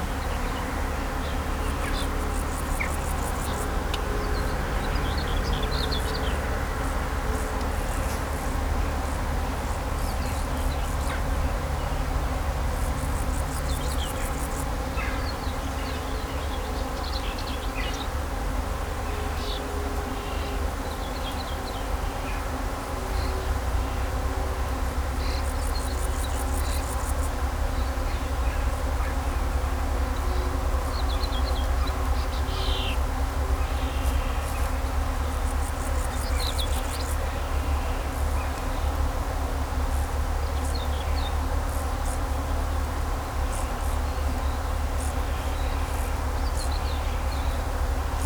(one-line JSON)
{"title": "In den Reben, Kallstadt, Deutschland - In Kallstadt summen die Bienen", "date": "2022-06-10 15:00:00", "description": "Natur, Weinreben, Bienen summen, Vögel singen, Fahrgeräusche von Straße, Land", "latitude": "49.50", "longitude": "8.18", "altitude": "152", "timezone": "Europe/Berlin"}